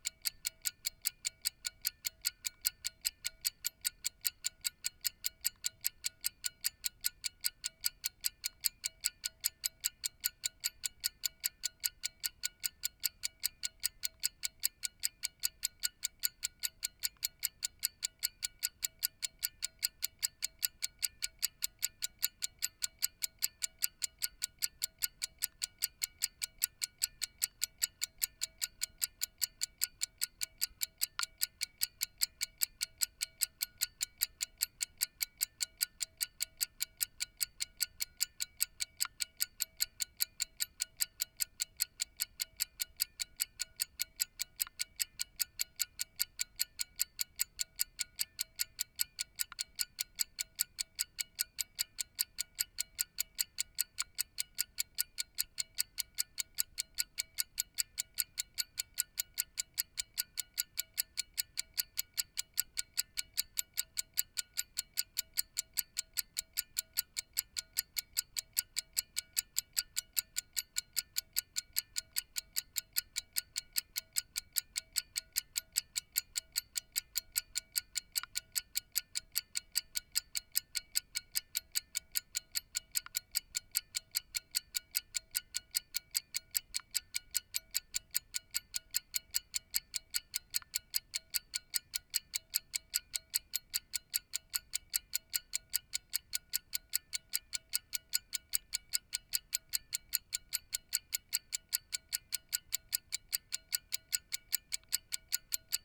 pocket watch ticking number two ... a waltham moon pocket watch made 1960s ... jrf contact mics attached to shell to olympus ls 14